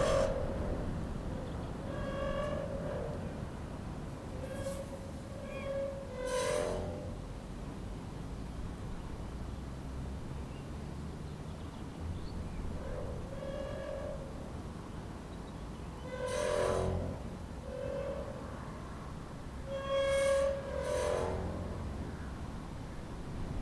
Arbesbach, Arbesbach, Österreich - All along the watchtower
A metal flag that sits on an old watchtower and mourns with the wind since forever.
Bezirk Zwettl, Niederösterreich, Österreich, 2 July